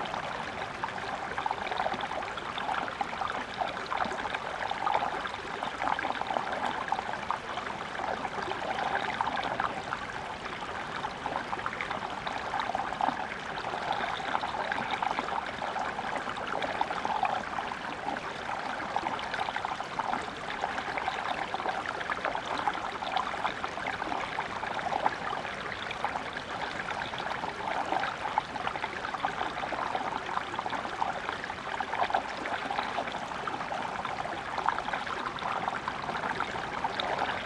Lithuania, Uzpaliai, at Seimyniksciai mound
spring stream down the Seimyniksciai mound